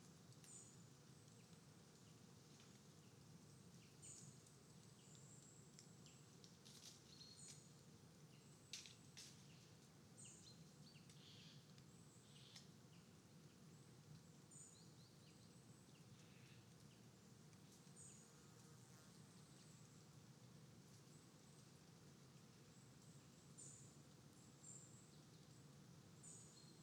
Schönbuch Nature Park, Heuberger Tor - Schönbuch Nature Park in autumn
Naturpark Schönbuch: Vögel, fallende Blätter und Nüsse
Schönbuch Nature Park: Birds, falling leaves and nuts
(Tascam DR-100MX3, EM172 (XLR) binaural)
Baden-Württemberg, Deutschland, October 2019